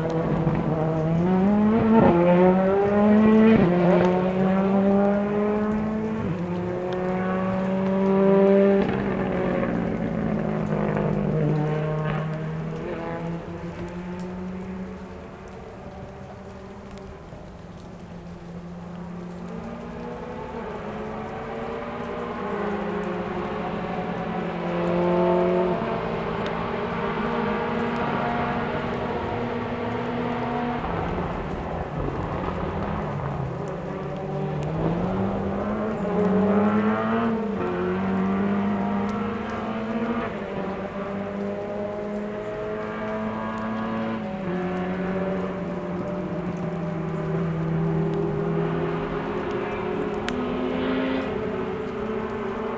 Porcen di Pedavena BL, Italia - Rally in Pedavena
XXXVII Pedavena - Croce d'Aune, Campionato Italiano Velocità Montagna (Rally).
Deafening noise of cars on the pass and the delicate sound of rain.
Sony PCM-D100